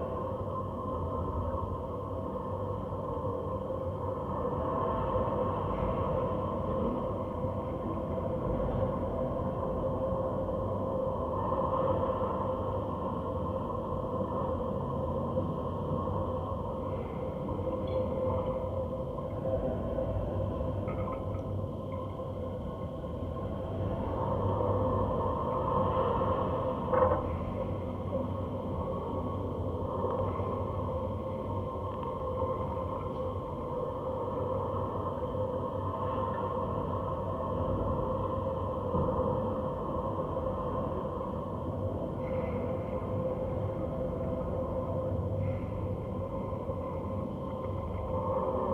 Litvínov, Czech Republic - Kopisty (the inside of the pipes)
Most Basin, North Bohemia
These sounds were recorded in the area of the former village of Kopisty. Kopisty was demolished (in the 70's) to make way for the expanding mines and petrochemical industries. There are many kilometers of pipes in the landscape. There is a black liquid tar flowing inside these pipes. Equipment: Fostex FR2, contact microphones.